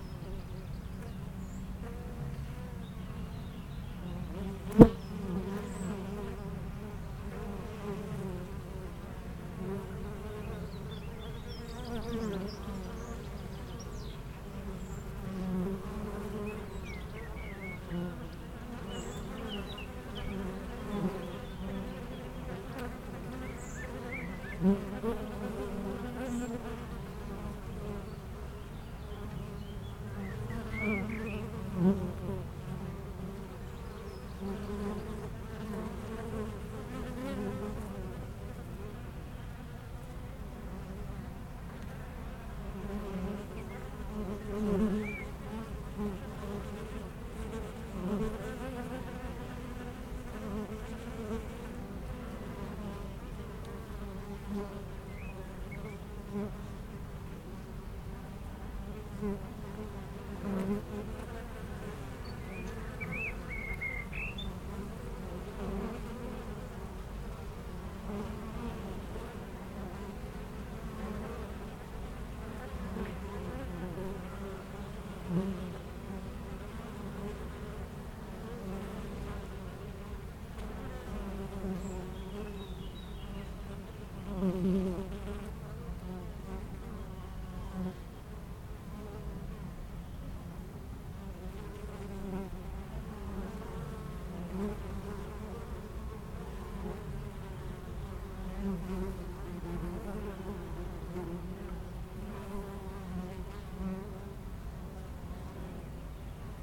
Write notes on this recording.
Entre deux ruches du rucher du jardin vagabond à Aix-les-bains les abeilles sont en pleine collecte et font d'incessants aller retour vers les fleurs, quelques oiseaux dans le bois voisin.